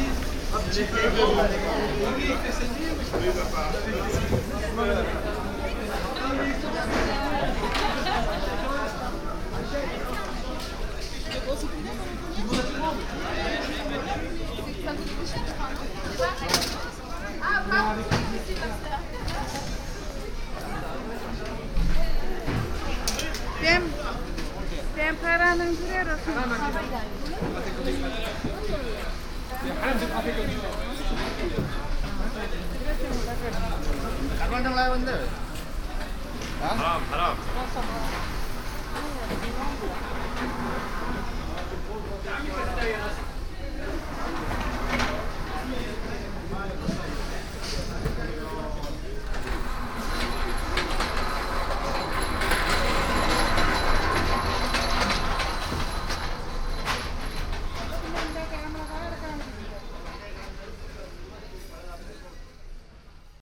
paris, noisiel, indoor food market
indoor food market in the evening
international city scapes - social ambiences and topographic field recordings
Noisiel, France